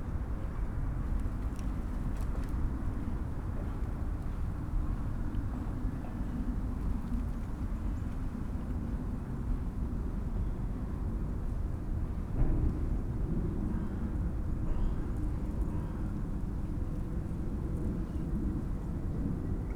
Berlin, Plänterwald, Spree - Sunday afternoon ambience
Plänterwalk, river bank, opposite of cement factory and heating plant Klingenberg. place revisited, cold winter afternoon, sounds from the power station.
(Sony PCM D50, DPA4060)
Berlin, Germany, 26 January